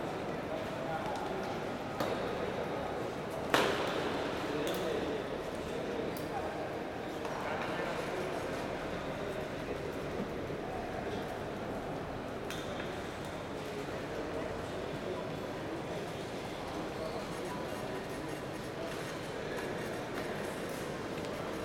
C. Cerrito, Montevideo, Departamento de Montevideo, Uruguay - Banco de la Republica Oriental del Uruguay - Montevideo
Siège de la "Banco de la Republica Oriental del Uruguay" - Montevideo
ambiance intérieure.
20 October 2001, 10:00